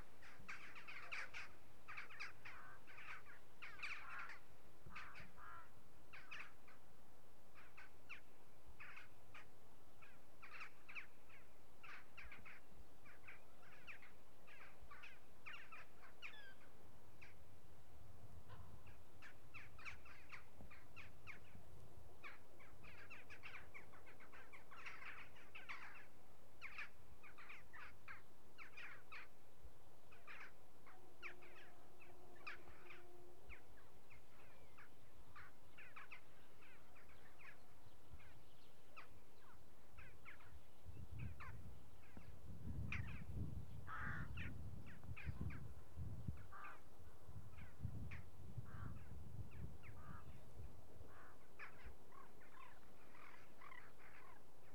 Suchy Las, road surrounding the landfill site - a flock of birds passing above
Poland, 3 February 2013